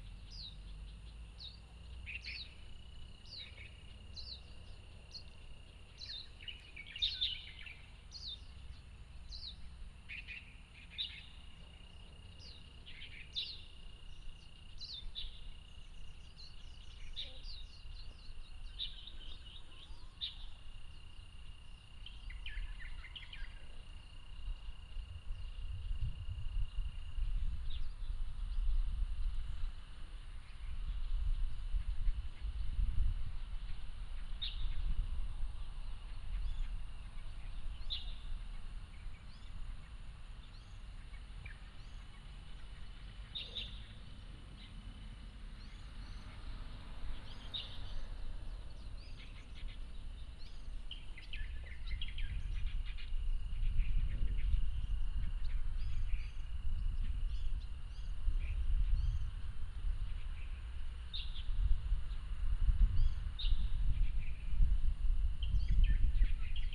National Chi Nan University, Puli Township - Under the tree
Under the tree, Bird calls, Insect sounds
Puli Township, Nantou County, Taiwan, 30 April 2015